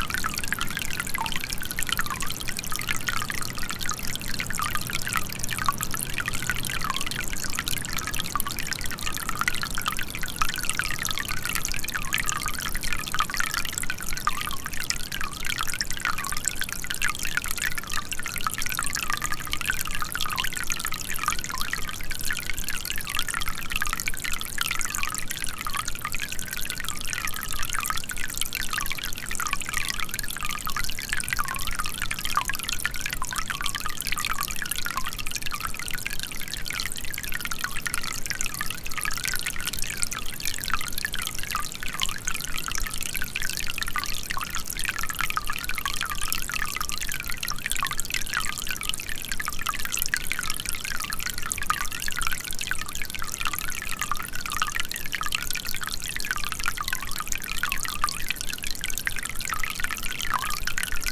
Šlavantai, Lithuania - Water spring running through a pipe
A stereo recording of a water spring running through a specially installed PVC pipe. Some forest ambience and wind can be heard as well. Recorded using ZOOM H5.
Alytaus apskritis, Lietuva, 7 July 2020